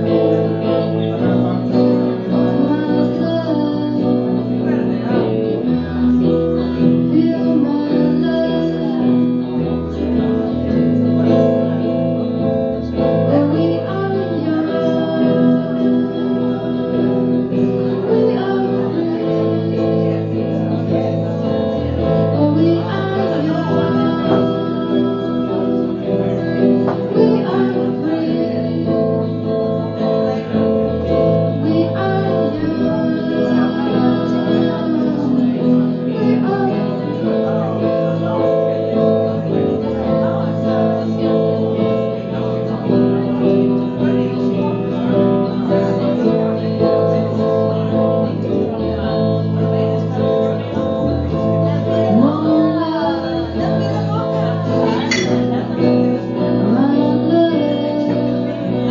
Concert at Der Kanal, Weisestr. - Der Kanal, Konzert der chilenischen Band LAS BABYSITTERS
just a short insight into the growing repertoire of the paris based chilenian girl band that we were happy to host for a transit gig in DER KANAL, Weisestr. 59
Berlin, Deutschland, European Union, 2 March 2010